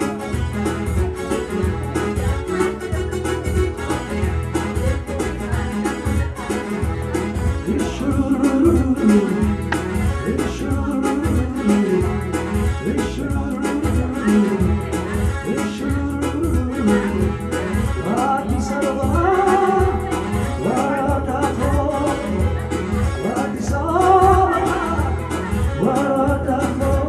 Gola Sefer, Addis Ababa, Éthiopie - Music in Dashen traditionnel restaurant
At Dashen Bet, all evenings, two men and a woman interpret popular and traditional songs. In this sound, the most old man sings and dances (better than Tom Jones).
Au Dashen Bet, tous les soirs, deux hommes et une femme interprètent des chansons populaires et traditionnelles. Dans ce son, l' homme le plus vieux les chante et danse (mieux Que Tom Jones).